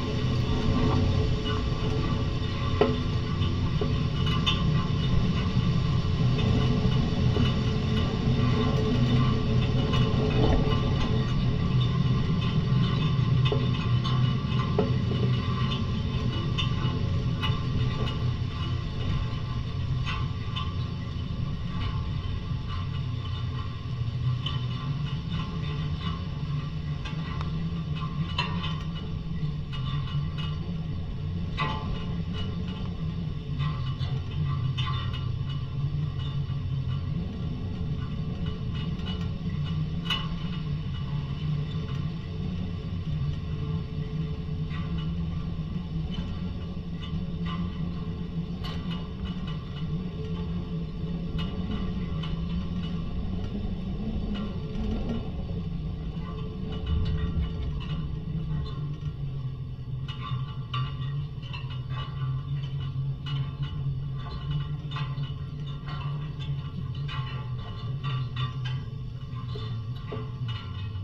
Šlavantai, Lithuania - Electricity pole resonance
Dual contact microphone recording of a electricity pole. Wind and clanging of electrical wires can be heard resonating through the pole.